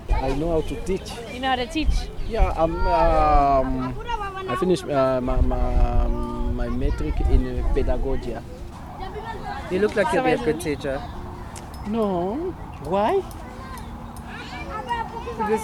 A gathering of makeshift shelters in a public park in the city of Durban, South Africa. A group of Congolese have been living here under plastic cover since June. Pots over open wood fires, washing on lines between the trees, many children are running around the huts. What happened? What made the group settle here under precarious conditions? What happens to the children when it's raining…? Gideon, a local passer-bye talks to Delphine, one of the group who is now living at Albert Park. Delphine responds with questions and songs and tells their story....
Durban, South Africa, 12 October 2008, 14:16